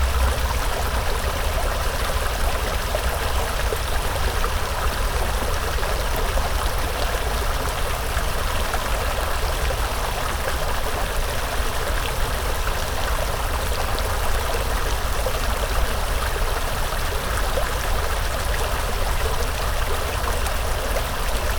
Birkdale St, Los Angeles, CA, USA - Taylor Yard Bridge Construction
Recording captured along the west bank of the LA River as construction of the Taylor Yard Bridge begins for the morning.